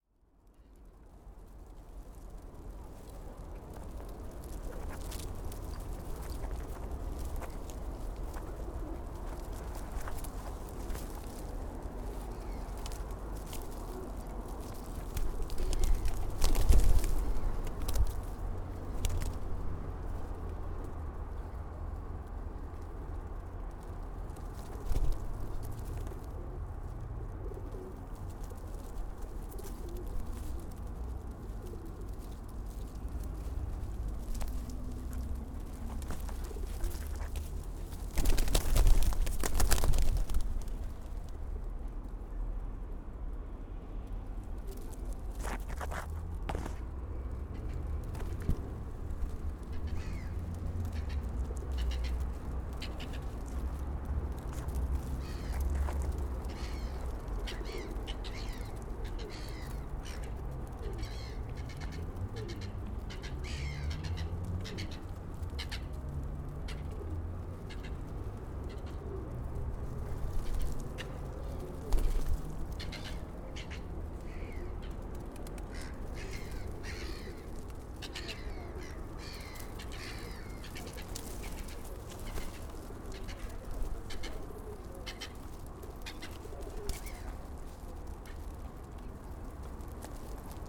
Tallinn, Baltijaam pigeons feeding - Tallinn, Baltijaam pigeons feeding (recorded w/ kessu karu)
hidden sounds, pigeon footfalls and cooing while feeding on potato pirukas at Tallinn's main train station.